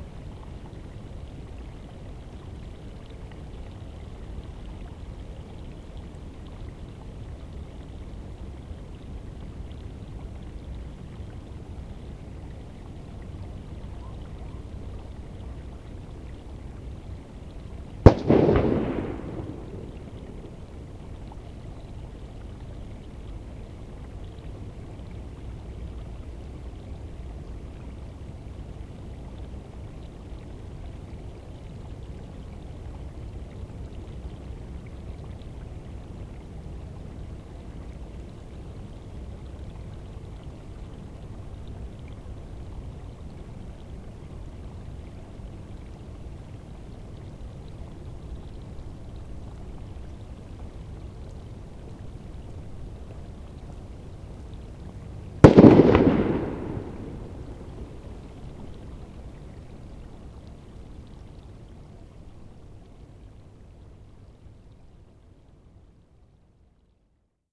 schwedt/oder: riverbank - the city, the country & me: drain pipe
water from a drain pipe runs in to the oder river, some boys play with fireworks
the city, the country & me: january 2, 2014